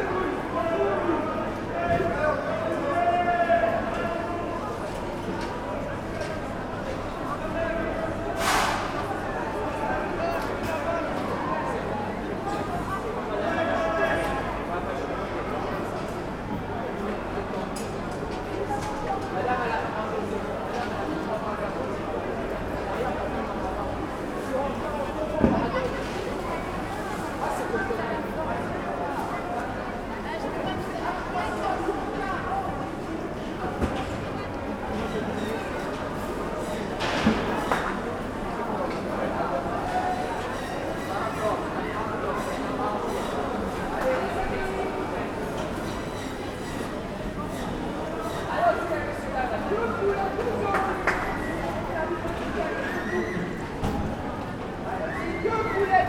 Allée Jean Lurçat, Juvisy-sur-Orge, France - Food market in Juvisy
Market atmosphere, indoor hall
Ambiance de marché, dans une halle
28 October 2017, ~13:00